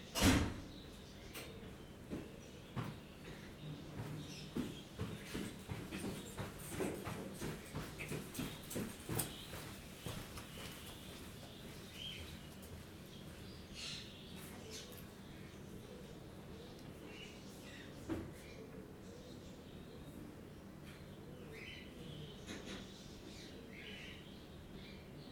Louis HaTshii St, Acre, Israel - Neigborhood waking up, Acre
Neigborhood waking up, Acre